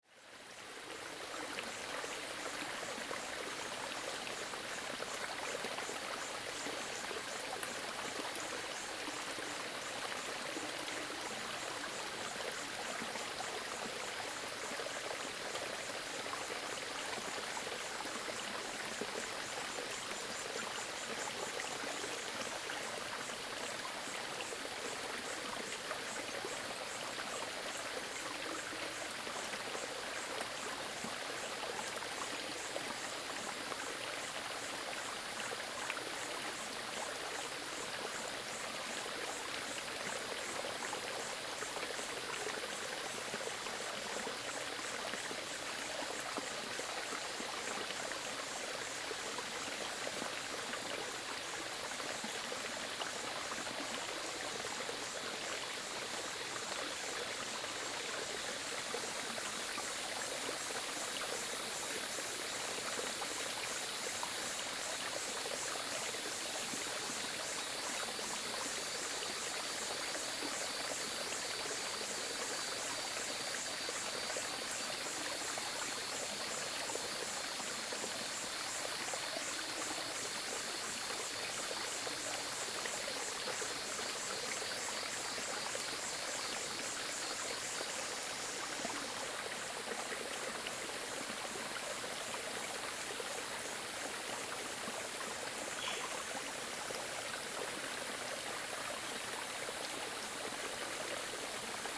華龍巷, 種瓜坑溪, 南投縣 - stream
Cicada sounds, stream, Headwaters of the river
Zoom H2n MS+XY